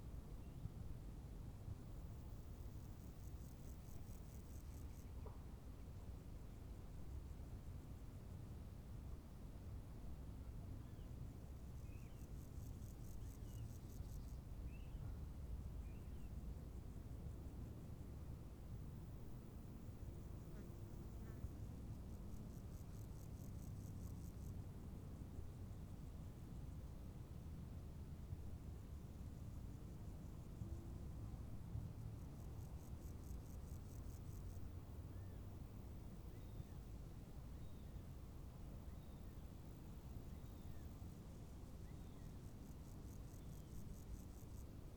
crickets in the formely "cord of death" of the berlin wall
borderline: august 3, 2011
Berlin, Germany